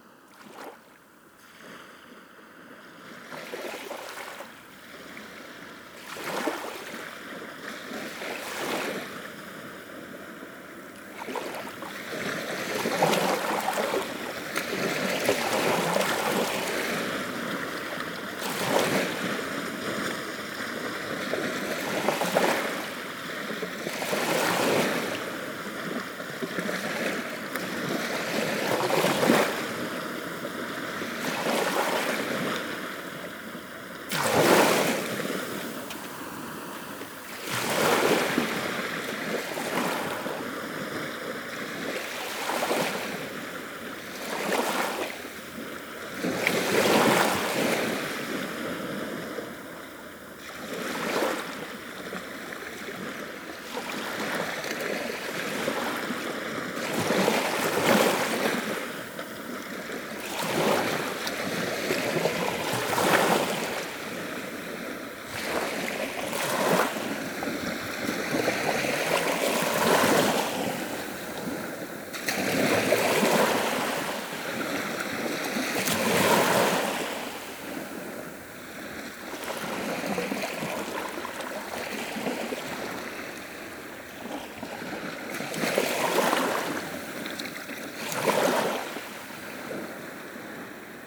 Cadzand, Nederlands - The sea
On the large Cadzand beach, quiet sound of the sea during the low tide.